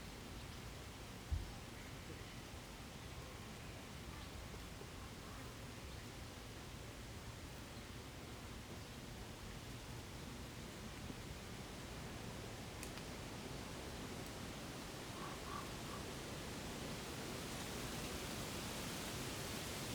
Recorded whilst cycling in the Spreewald in the last days of August. Leipe is a quiet holiday village surrounded by forest, canals. Older people walk past. The starlings chatter, at one point they go silent but resume again. Then they suddenly leave in a purr of wings. It is windy. Occasionally acorns fall.
Brandenburg, Deutschland